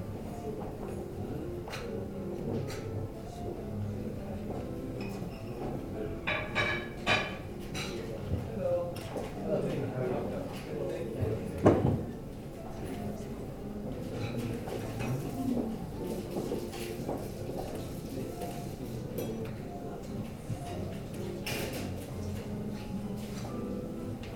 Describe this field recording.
Below the lounge where this was recorded, there was a pianist playing live and a water feature. You can hear the sounds of the piano drifting up to where we sat; the view across the city from so high was so amazing I decided to just sit and look and listen (and record). The cooking sounds are coming from the Molecular Tapas Bar where micro-gastronomic treats are served each night to small groups of just 8 people at a time. You can also hear other people talking, drinks being served, and something of the high-glass/plush-lined interior of this insanely opulent place.